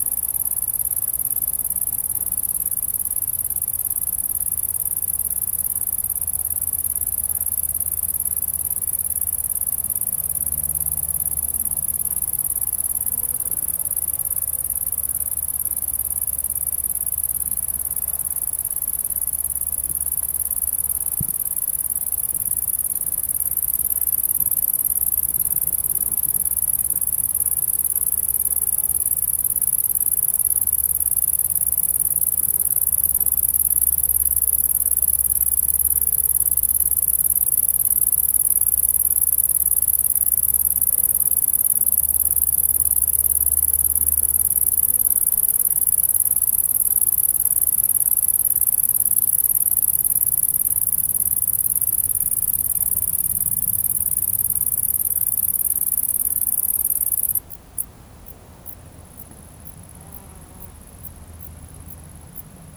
Chaumont-Gistoux, Belgique - Criquets
Criquets sing in the shoulder, impossible to see, but what a concert... It's the summer signal in our countrysides. At the end of the recording, especially one is very nervous !
Chaumont-Gistoux, Belgium, 15 August 2016